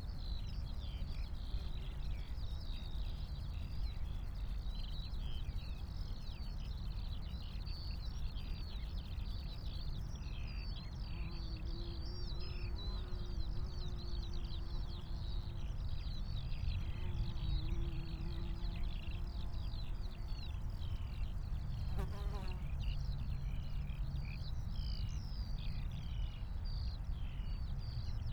Tempelhofer Feld, Berlin - sky larcs, field ambience, WW2 aircraft
warm and sunny day in late spring, high grass, the meadows are protected from access by barrier tape because of bird protection, mainly sky larcs. 3 former WW2 aircrafts (not sure though..) passing-by, direction south east, maybe a transport from Tegel to Schönefeld airport.
(SD702, MKH8020 AB)
Deutschland